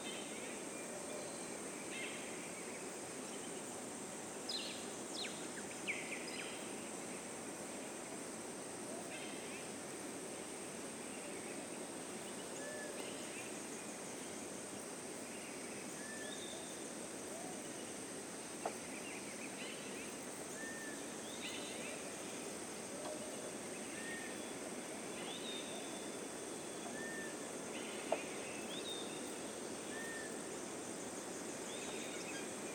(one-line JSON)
{"title": "Mairiporã - State of São Paulo, Brazil - Brazil Atlantic Forest - Cantareira State Park - Lago das Carpas", "date": "2016-12-05 11:30:00", "description": "Recording during the morning of December 5th.\nEquipment used Sound Devices 702 & Sennheiser 8020 A/B.\nPhotograph by Ludgero Almeida.", "latitude": "-23.42", "longitude": "-46.64", "altitude": "1021", "timezone": "Europe/Berlin"}